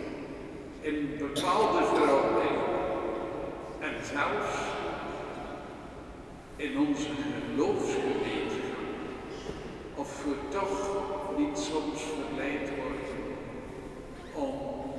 Mechelen, Belgique - Mass
The mass in the OLV-over-de-Dijlekerk. In first, the priest speaking. After, people praying and at the end, beautiful songs of the assembly. During the vocal, the offertory : people opening the wallets and a lot of squeaking of the old benches.
Mechelen, Belgium